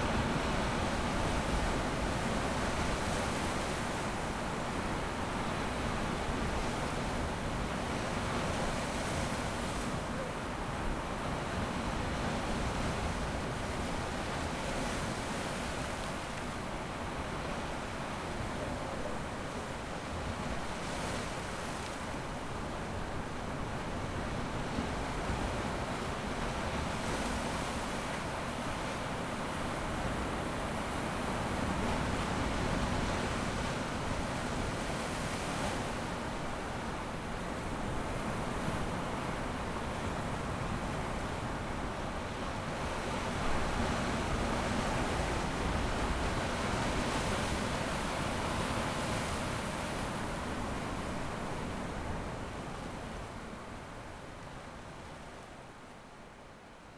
Estr. Real, Vila Franca Do Campo, Portugal - Sea waves
11 April 2019